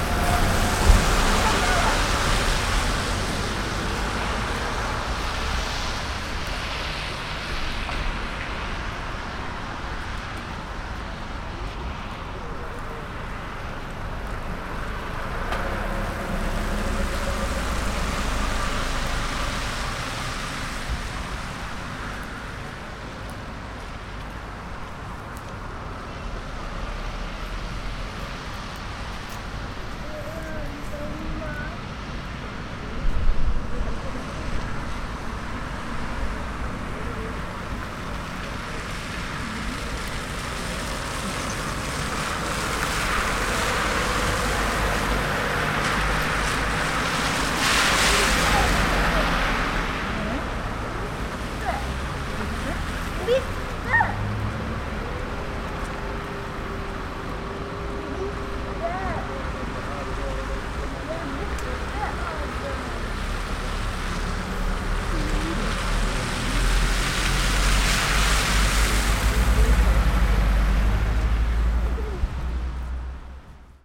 The ending stop of the soundwalk at the rather busy (for being a small town) street crossing of Storgatan and Kungsgatan. Rain is still present which can be heard very
well in the wheels in water sounds. When listening here we realized there is a mismatch on one of the manhole covers in the street there so when cars are passing over it, it makes a heavy metal sound which brings a special aural profile to this place (here it is only heard once or twice though). WLD